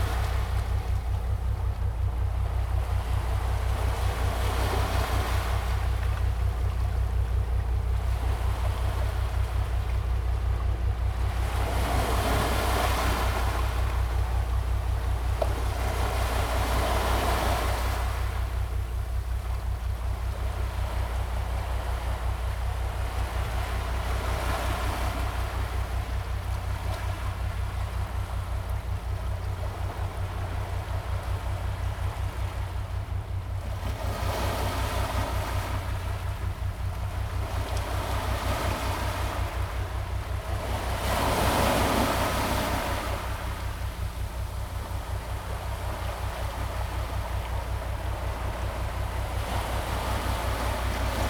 Sound of the waves, On the coast
Zoom H2n MS+XY +Sptial Audio